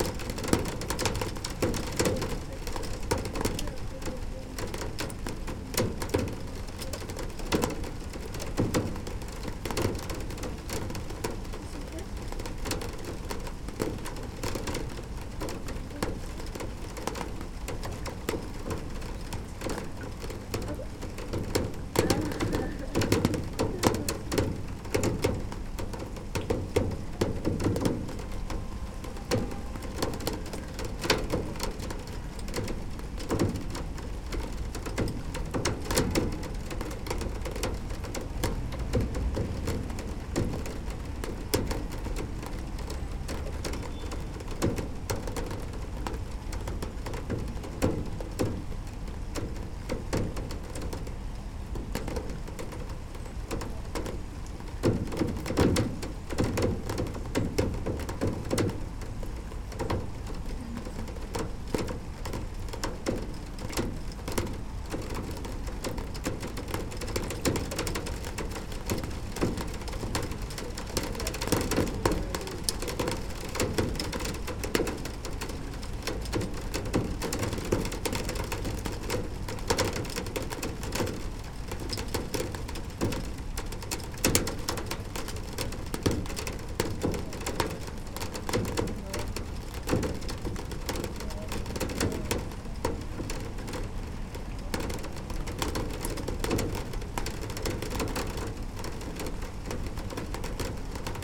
Pluie sur un coffret de volet roulant... si si le truc pour les stores la.
Rain falling on a roller shutter box at the window, a little ambiance of the city.
/Oktava mk012 ORTF & SD mixpre & Zoom h4n
Paris, France, 13 October 2016, 13:31